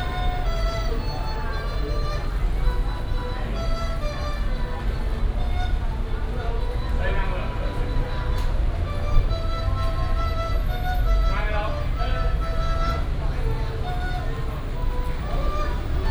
臺中舊火車站, Taichung City - Old station hall entrance
in the Old station hall entrance, Traffic sound, Footsteps, old Street artist